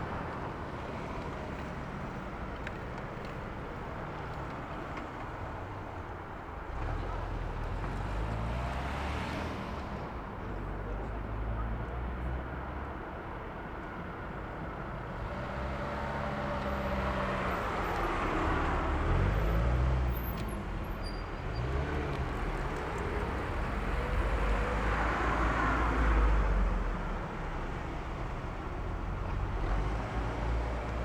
Berlin: Vermessungspunkt Maybachufer / Bürknerstraße - Klangvermessung Kreuzkölln ::: 27.11.2010 ::: 13:11
Berlin, Germany